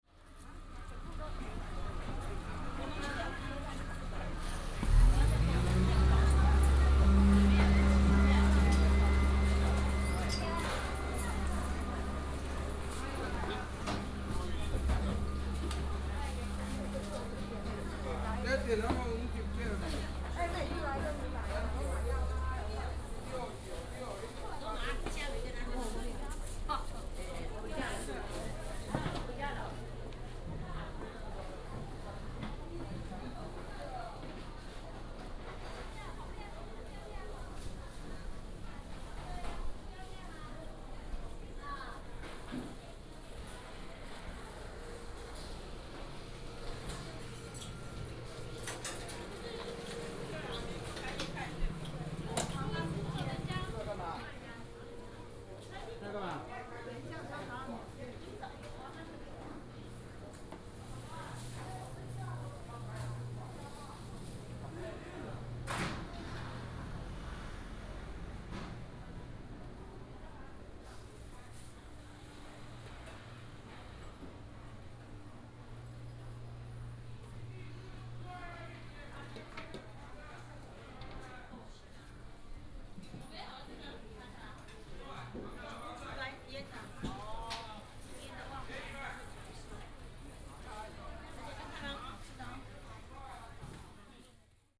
竹北公有零售市場, Zhubei City - Walking in the traditional market
Small market, alley, Walking in the traditional market